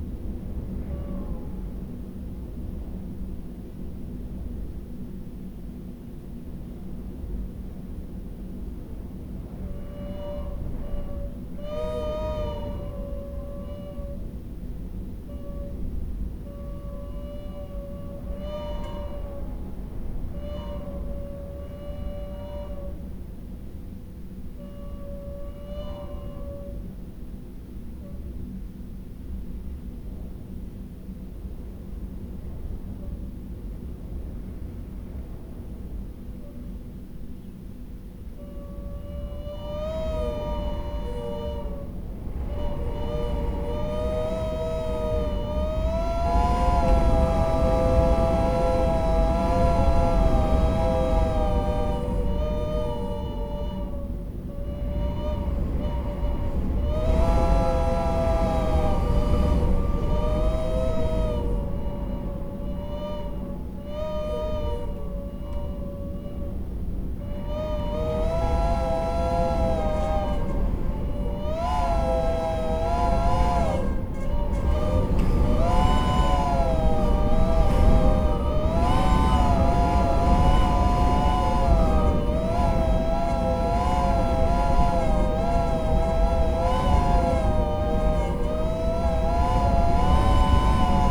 Dumfries, UK - whistling window seal ...
whistling window seal ... in double glazing unit ... olympus ls14 integral mics ... farmhouse tower ...